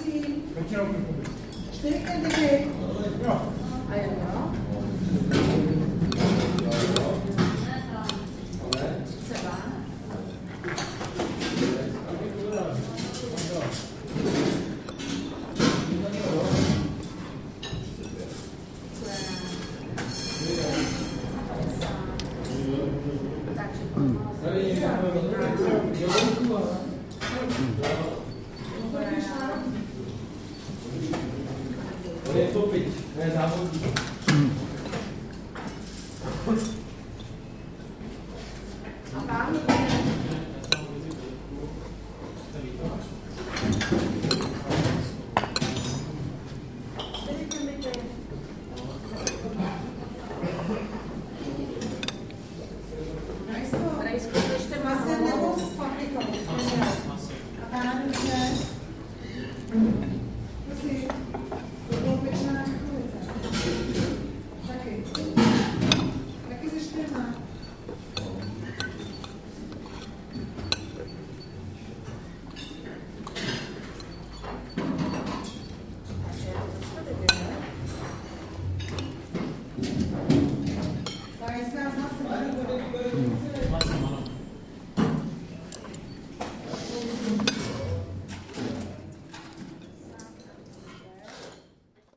Zenklova street, buffet
At Zenklova street in Liben, there is an old butcher shop and cheap popular meat buffet, where working class comes for lunch.
Prague, Czech Republic, 5 December 2010